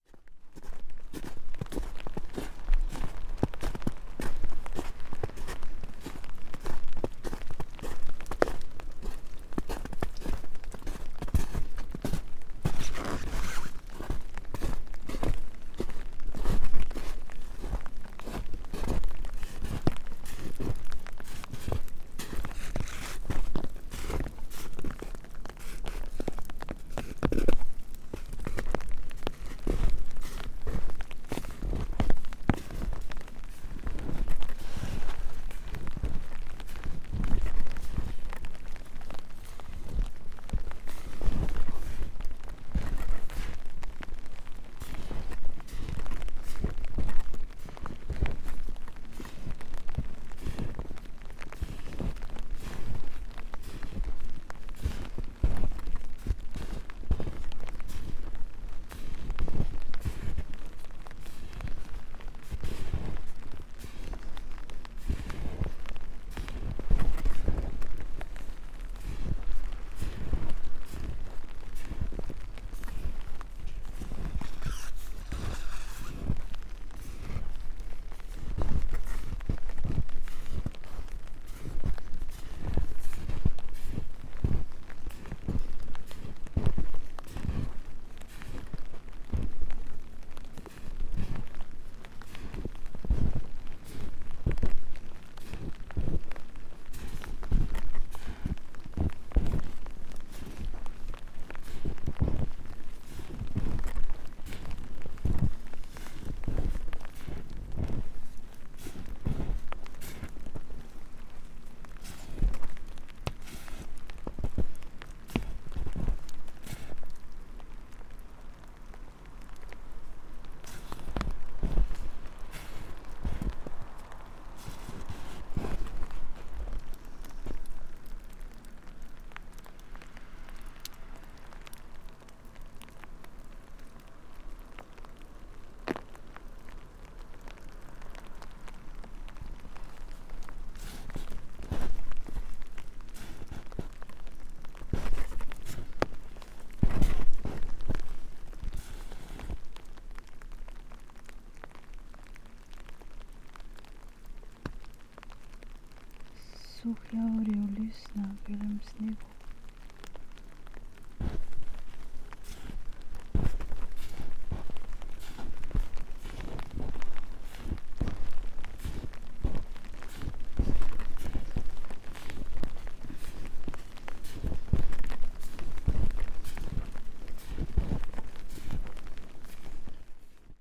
light rain, umbrella, drops, snow, steps, spoken words ...
Maribor, Slovenia, 24 February 2013